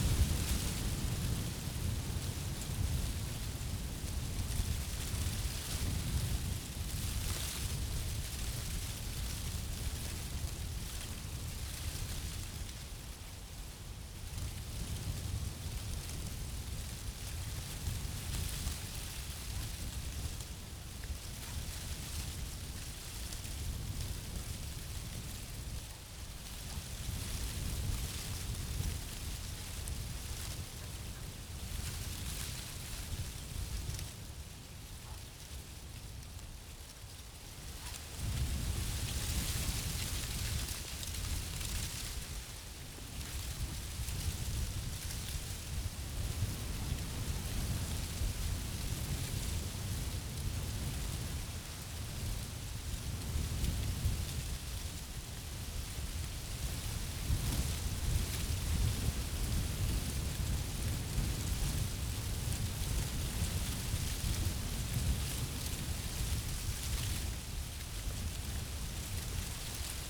Tempelhof, Berlin, Deutschland - wind in oak tree
Berlin Tempelhof airfield, small oak tree in strong wind, dry leaves rattling
(SD702, DPA4060)